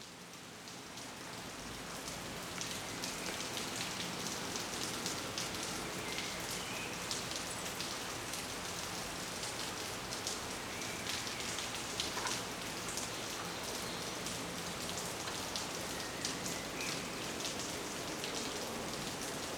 Waldparkdamm, Mannheim, Deutschland - Am Rhein bei Starkregen
Rhein, starker Regen, Binnenschiff, Halsbandsittich, Urban